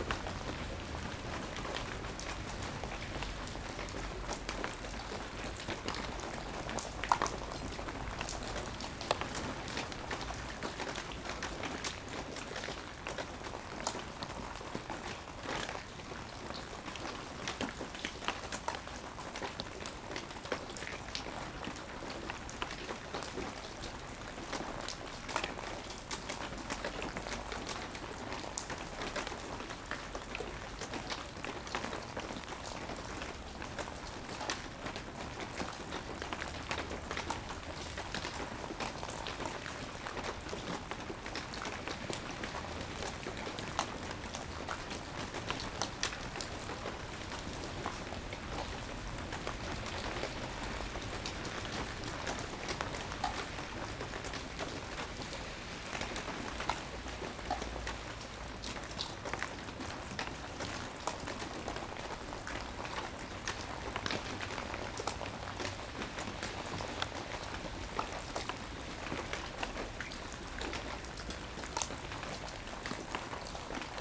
Mont-Saint-Guibert, Belgique - Very bad weather
A very bad weather in an abandoned factory. Microphones are hidden in a mountain of dusts and it's raining raining raining...